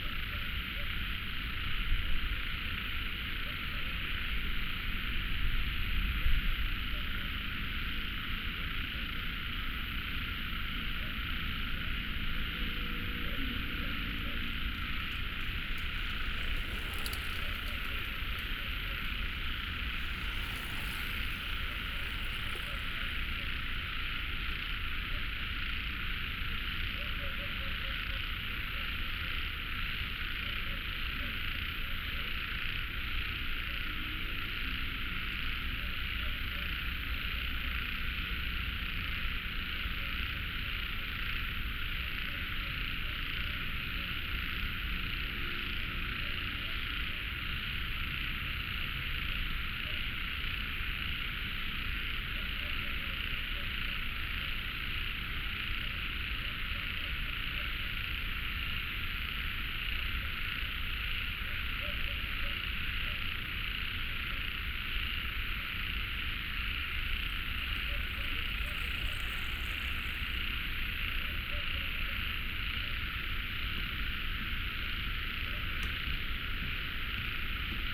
北投區關渡里, Taipei City - Frogs sound
Traffic Sound, Environmental sounds, Birdsong, Frogs, Running sound, Bicycle through
Binaural recordings
Taipei City, Beitou District, 關渡防潮堤, 17 March 2014, 18:47